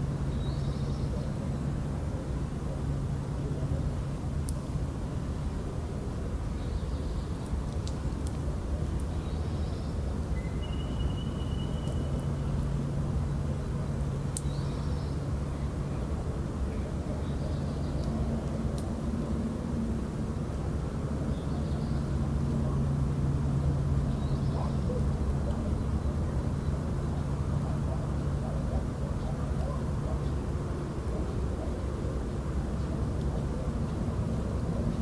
Шум производства, пение птиц и шелест камышей
Donetska oblast, Ukraine, 25 March 2019, 07:30